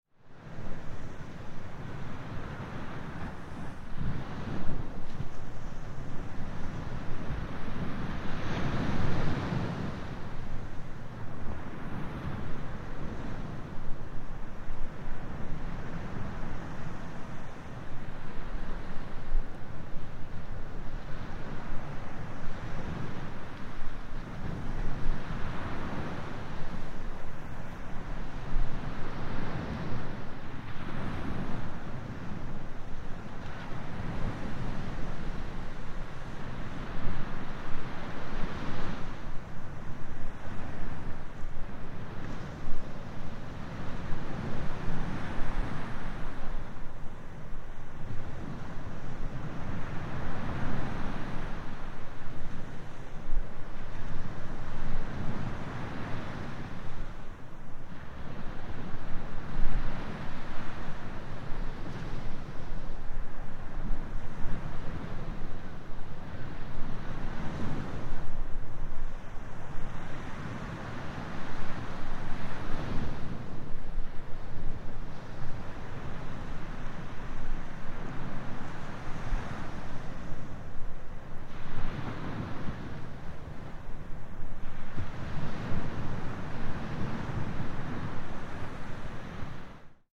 Westerland, Deutschland - Meeresrauschen
Meeresrauschen, Westerland, Deutschland, Europa, Sylt, Nordsee, Wattenmeer, Strand, Wind, Wellen, Ocean waves, Germany, Europe, North Sea, Wadden Sea, beach, waves
Germany, 10 October